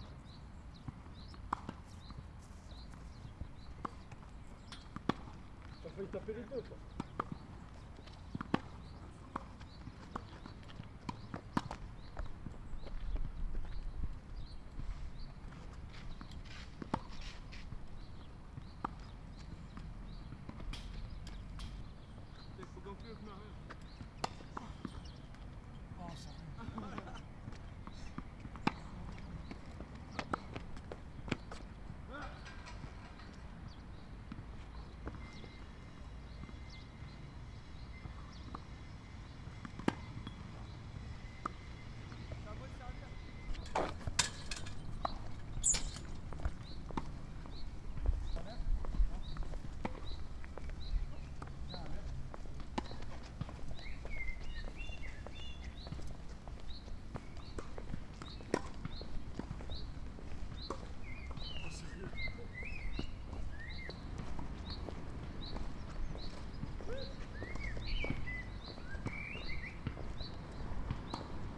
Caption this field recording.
youths playing tennis on two tennis courts, a girl is jogging, someone's practising at the basketball hoop